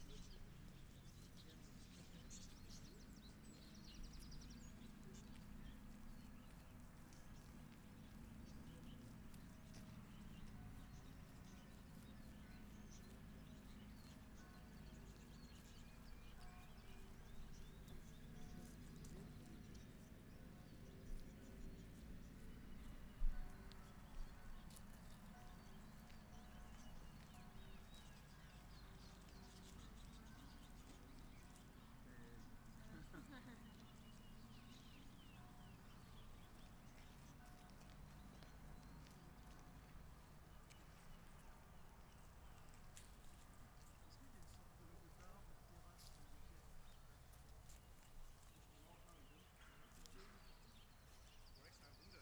Thabor - St Hélier, Rennes, France - Oiseaux sur la pelouse
On entend des cloches. Des oiseaux picorent sur la pelouse. Les oiseaux s'envolent.